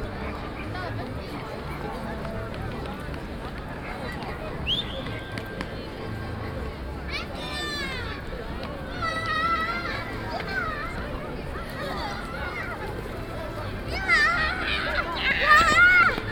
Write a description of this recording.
two music ansambles and children at the turistic saturated square